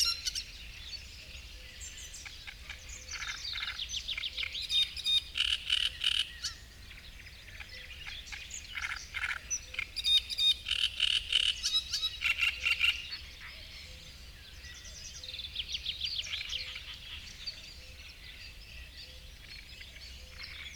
Gmina Tykocin, Poland - great reed warbler and marsh frogs soundscape ...
Kiermusy ... great reed warbler singing ... frog chorus ... sort of ... open lavalier mics either side of a furry table tennis bat used as a baffle ... warm misty morning ... raging thunderstorm the previous evening ...